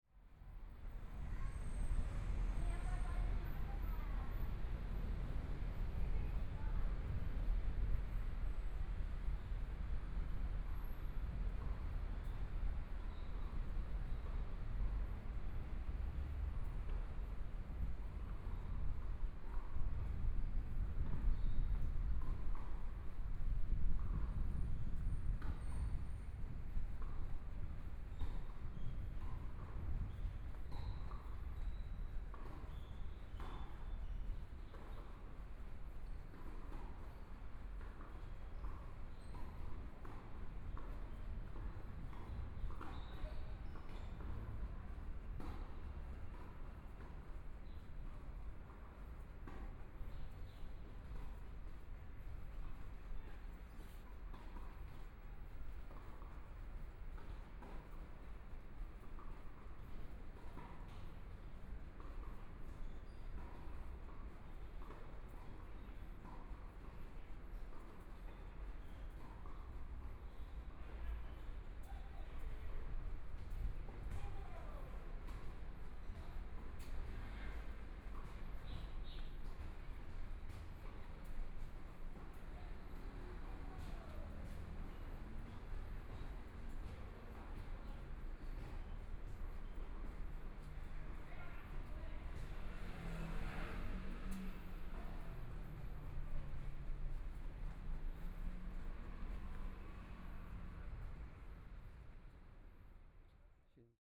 Xinxing Market, Zhongshan District - Abandoned market
walking in the Abandoned market, Next to the school, Tennis Sound, Binaural recordings, Zoom H4n+ Soundman OKM II